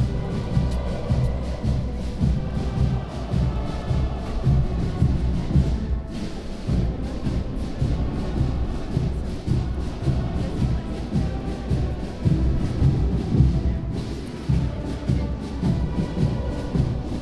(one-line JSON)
{"title": "Plaza Avaroa, La Paz, Bolivia - Dia del Mar", "date": "2012-03-12 00:02:00", "description": "Dia del Mar", "latitude": "-16.51", "longitude": "-68.13", "altitude": "3562", "timezone": "America/La_Paz"}